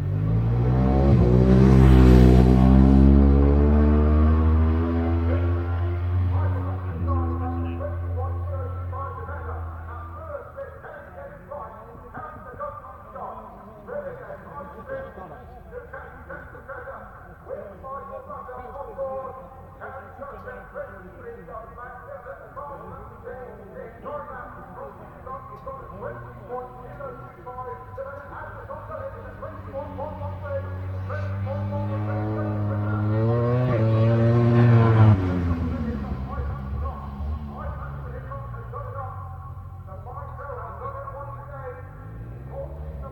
Leicester, UK - british superbikes 2002 ... superbikes ...
british superbikes 2002 ... superbikes superpole ... mallory park ... one point stereo mic to minidisk ... date correct ... time not ...
England, United Kingdom, 14 September, 16:00